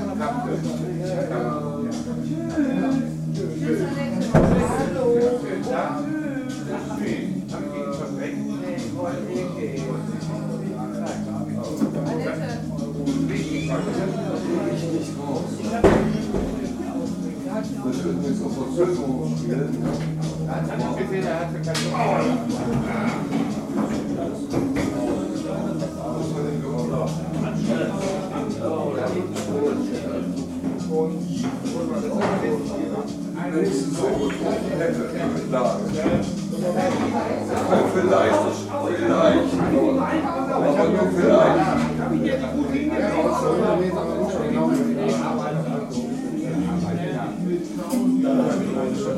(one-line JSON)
{"title": "essen - zur kleinen krone", "date": "2009-10-20 18:15:00", "description": "zur kleinen krone", "latitude": "51.46", "longitude": "7.01", "altitude": "70", "timezone": "Europe/Berlin"}